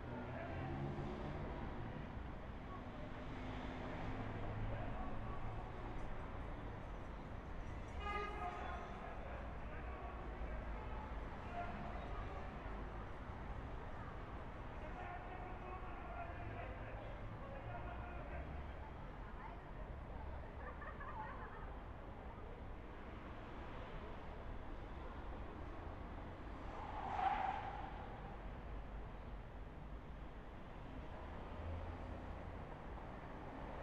Tiergarten, Βερολίνο, Γερμανία - 13 days before the world-listening-day 2013
sex-workers in trouble../ dogs&motors&etc. / siren-doppler-effect (independent event* from the latter)/[XY-recording-mic. In a second-floor-room with an open-window]